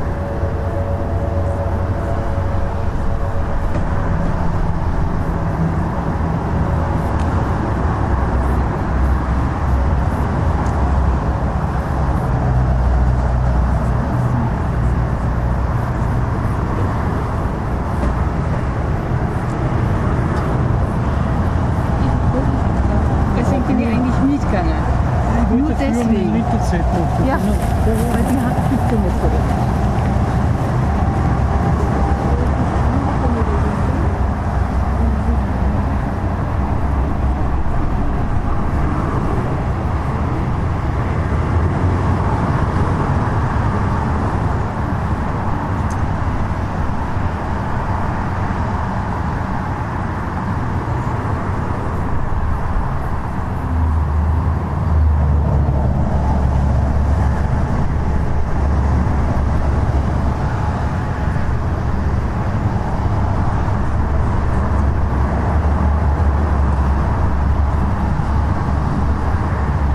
wien-donaustadt, motorway underpass
ambience nature vs. the new S2 motorway
Vienna, Austria, 2 July 2011